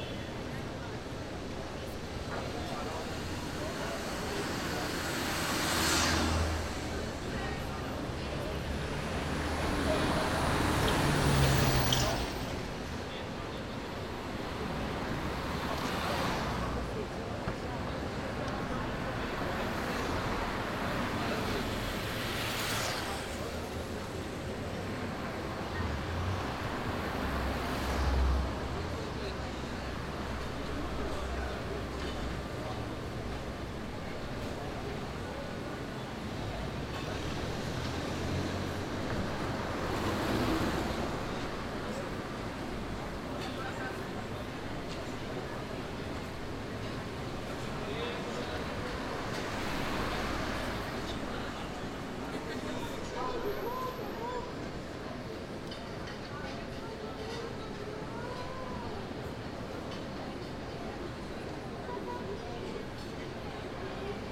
Rua Antônio Carlos - 4-000, R. da Consolação, 0130 - República, São Paulo, Brasil - Antônio Carlos - São Paulo - Brazil
At lunch time, next to Paulista, people walk around and eat. Cars and motorcycles pass by.
Recorded with Tascam DR-40 recorder and Shotgun Rode NTG 2 microphone.